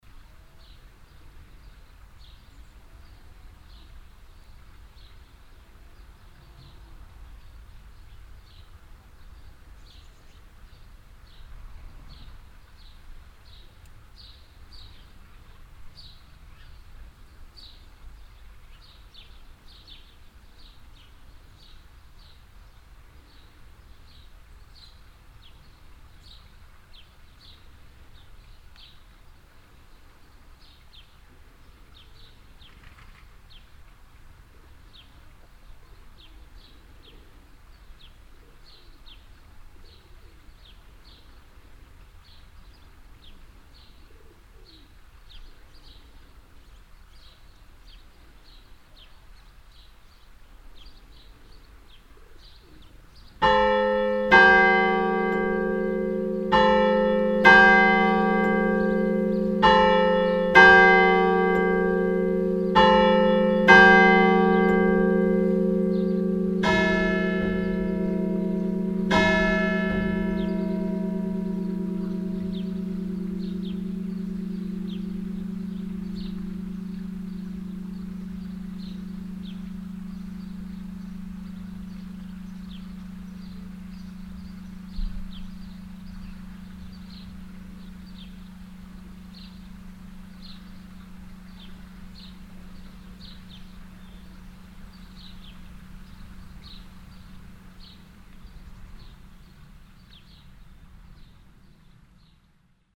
The ambience of the place followed by the bells of the Saint-Maurice d Eschweiler church at 2o clock on a sunday in summer 2011.
Eschweiler, Kirche, Glocken
Die Umgebung des Platzes, gefolgt von den Glocken der St. Mauritius-Kirche in Eschweiler um 2 Uhr an einem Sonntag im Sommer 2011. Die Kirche ist Teil des so genannten Pfarrverbands Kiischpelt.
Eschweiler, église, cloches
L’atmosphère de la place suivi des cloches de l’église Saint-Maurice d’Eschweiler qui sonnent 14h00, un dimanche de l’été 2011.
Project - Klangraum Our - topographic field recordings, sound objects and social ambiences
Eschweiler, Luxembourg, 3 August